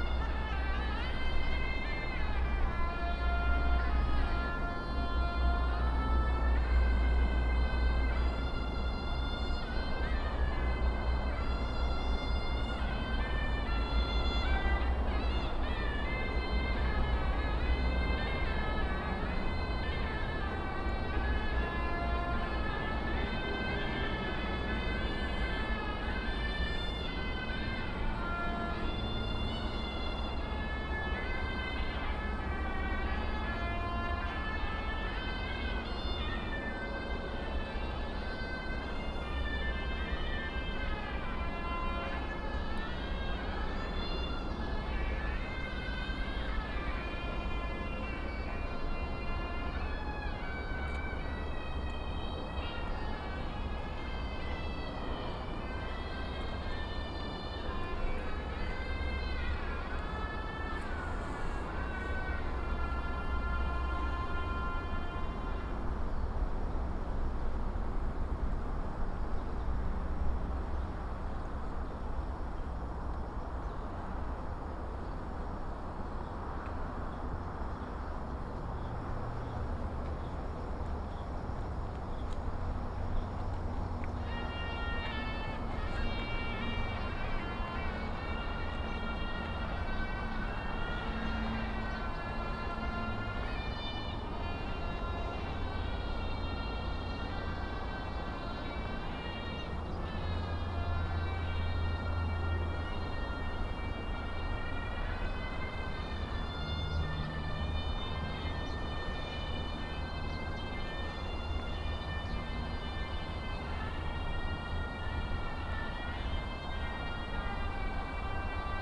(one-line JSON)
{"title": "仁和步道, Hukou Township, Hsinchu County - Under the high speed railway", "date": "2017-08-12 17:43:00", "description": "Under the high speed railway, traffic sound, An old man practicing playing the suona below the track", "latitude": "24.88", "longitude": "121.07", "altitude": "143", "timezone": "Asia/Taipei"}